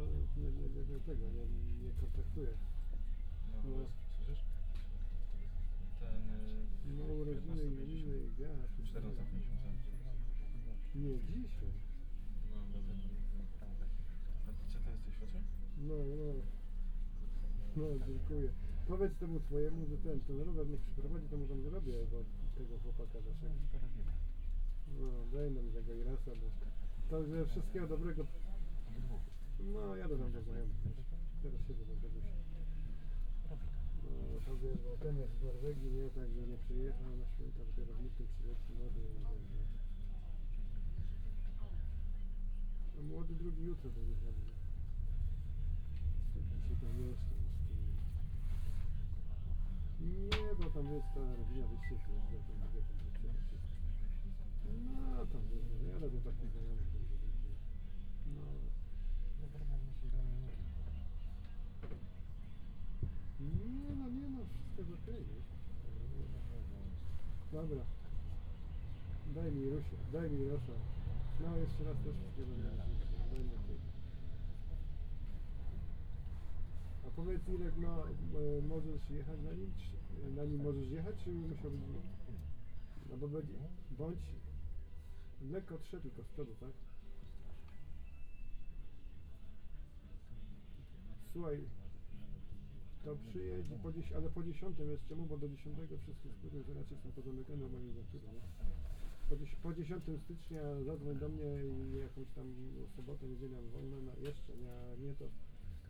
Poznan, bus depot departure terminal - seat taken
(binaural)waiting for the bus to depart. passengers getting in, taking their seats, taking of their coats, putting away their bags, talking in muffled voices, making phone calls. bus leaves the depot.